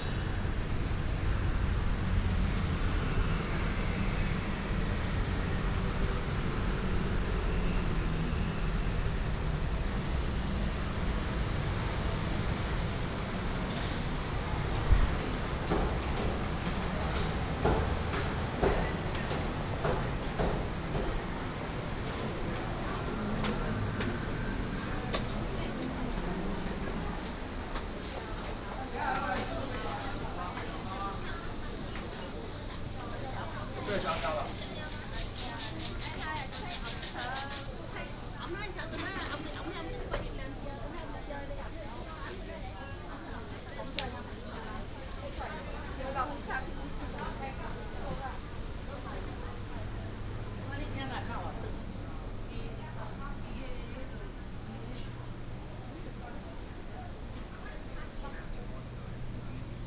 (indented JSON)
{
  "title": "Chenggong Market, 基隆市仁愛區 - Walking in the market",
  "date": "2016-08-04 08:21:00",
  "description": "Traffic Sound, Walking through the market",
  "latitude": "25.13",
  "longitude": "121.74",
  "altitude": "14",
  "timezone": "Asia/Taipei"
}